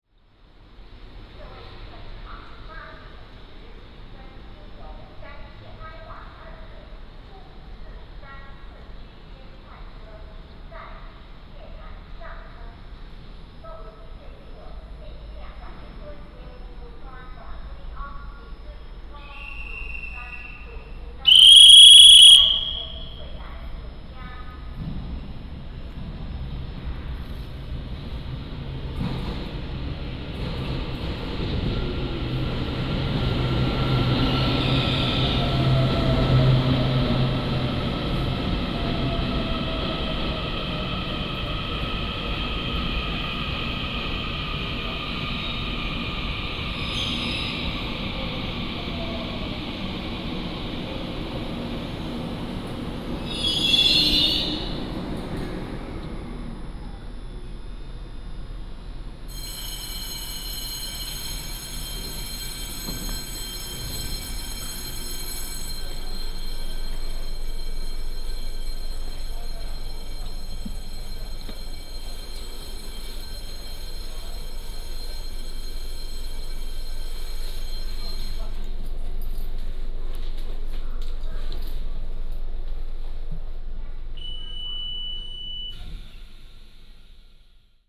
新烏日車站, Wuri District - At the station platform
At the station platform, The train arrives, Walk into the car
Taichung City, Taiwan